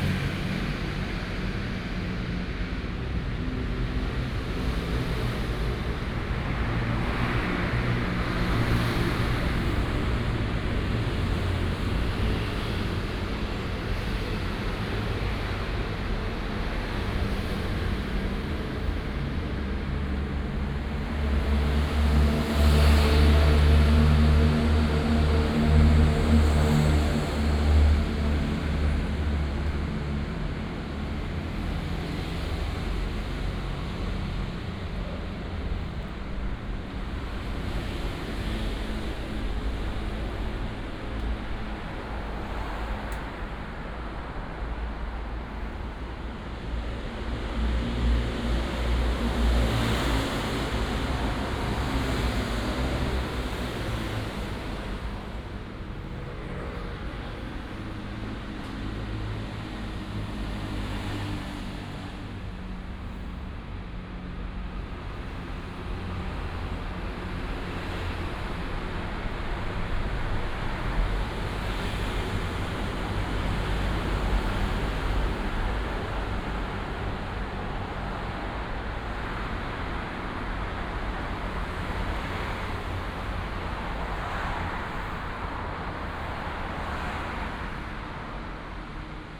Xida Rd., East Dist., Hsinchu City - Traffic Sound
Below is the traffic underground channel, Traffic Sound